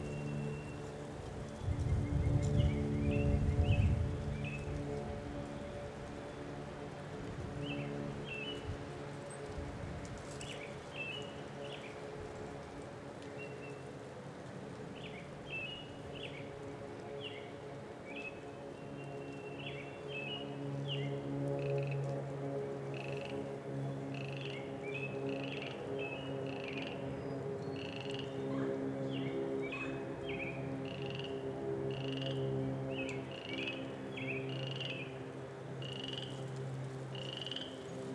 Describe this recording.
Bullfrogs along the Clear Creek Trail in Coralville, Iowa recorded with Rode NT5 microphones in an A-B configuration into a Sound Devices Mixpre-6.